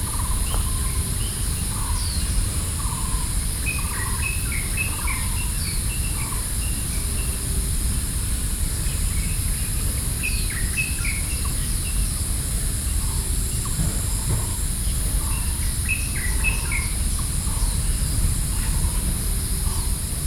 Beitou, Taipei - Park in the morning
The morning of the hill park, Birdsong, Sony PCM D50 + Soundman OKM II